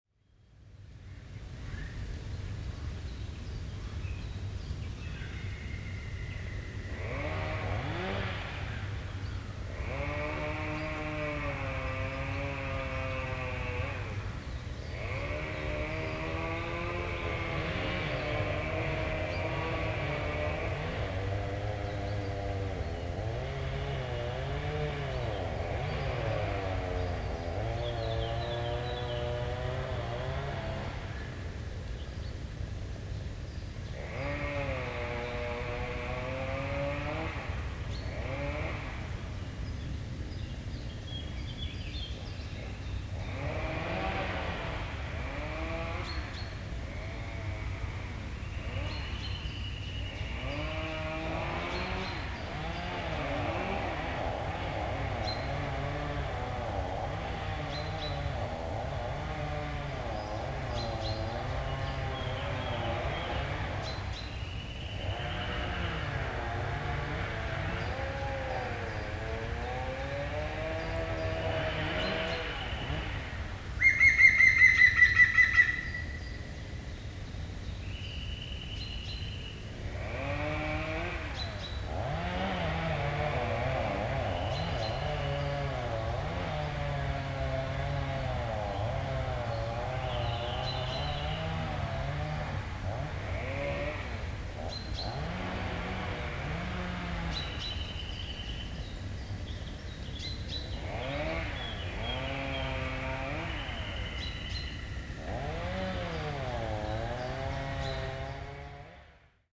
Arona. Chainsaws and green woodpecker
Morning in a chestnut grove. Nearby the workers are cutting trees with chainsaws.